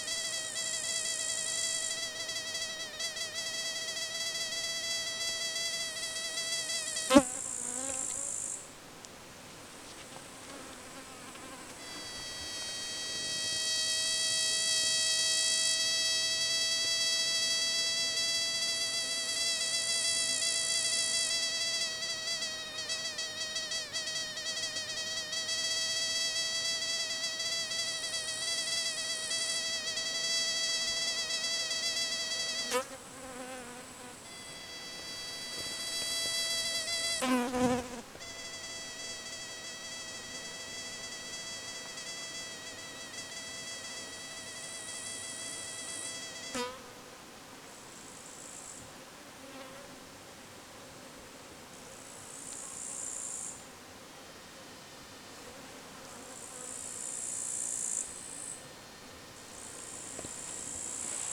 Lithuania, Sudeikiai, a bug
some insect sitting on a leaf and producing these high-pitched sounds